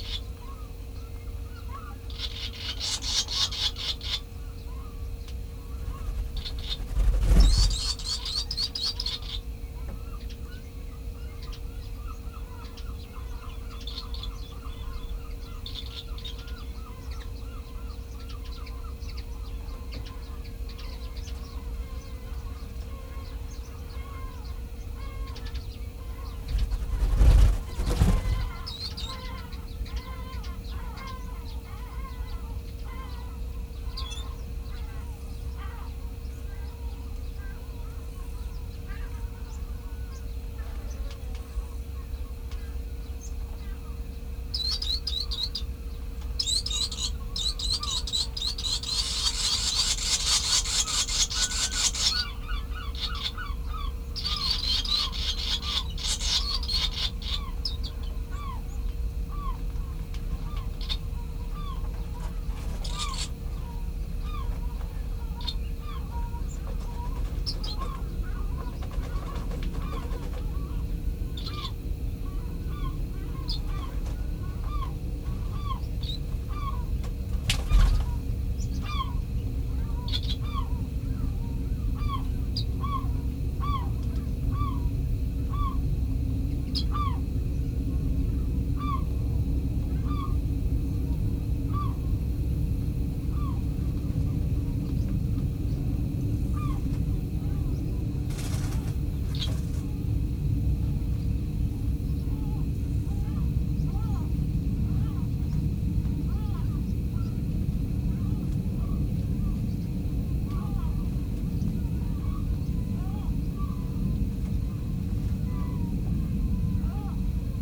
barn swallow nest ... open mic ... recorded in the vestibule of the volunteers hut called Tammy Noddy ... something to do with a Scottish moth ..? nest was over the water butt ... cassette to open reel to sdhc card ... bird calls from ... redshank ... linnet ... curlew ... common tern ... sandwich tern ... any amount of background noise ...